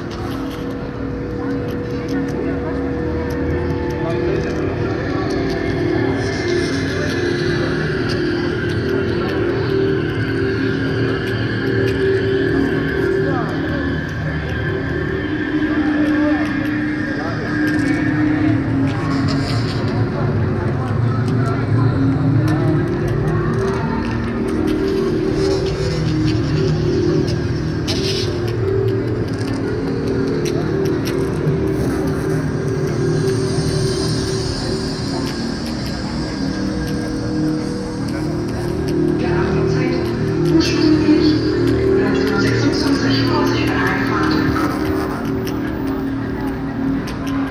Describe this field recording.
Auf dem Willy Brandt Platz an einem sonnigen Frühlings-Samstag nachmittag. Klangausschnitt der mehrkanaligen Klanginstallation, eingerichtet für das Projekt Stadtklang //: Hörorte - vor der mobilen Aufnahmestation - einem Bus der EVAG, weitere Informationen zum Projekt hier: At the Willy Brandt square on a sunny springtime saturday. Excerpt of the soudn of a sound installation for the project Stadtklang //: Hörorte - in front of the mobile recording station - an EVAG City bus. Projekt - Stadtklang//: Hörorte - topographic field recordings and social ambiences